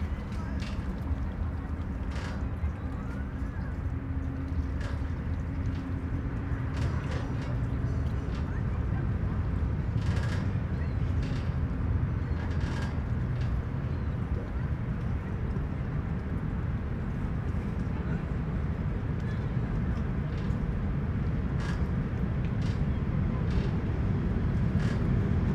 boat dock and passing train, Vienna
squeaking gate on a boat dock and a passing train behind
Vienna, Austria